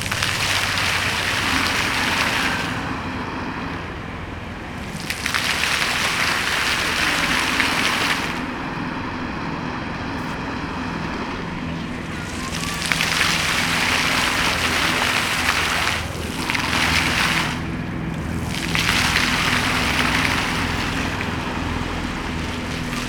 Skwer 1 Dywizji Pancernej WP, Warszawa, Pologne - Multimedialne Park Fontann (c)
Multimedialne Park Fontann (c), Warszawa
Poland, 17 August